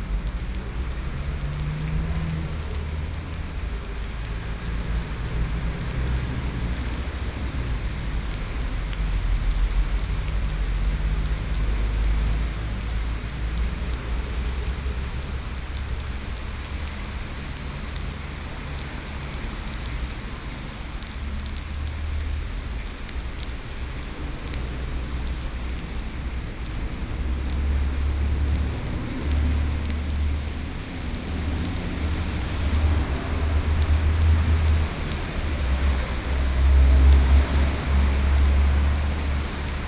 Toucheng Township, Yilan County - Rainy Day
Rainy Day, Sitting in the square in front of the temple, The traffic soundst, Binaural recordings, Zoom H4n+ Soundman OKM II